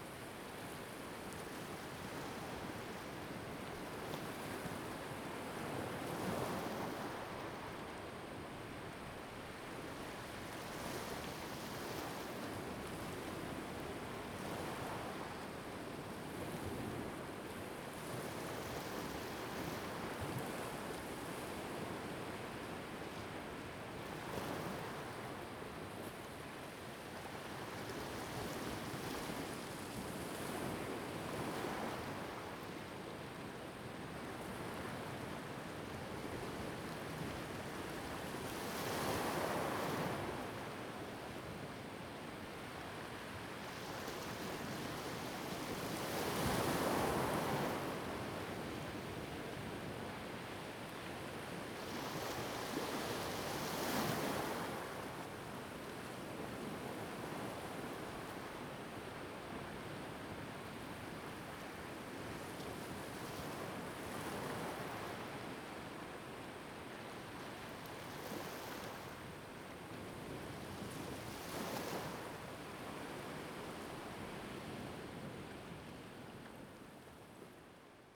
At the beach, sound of the waves
Zoom H2n MS +XY
Taitung County, Taiwan, 29 October 2014, 21:32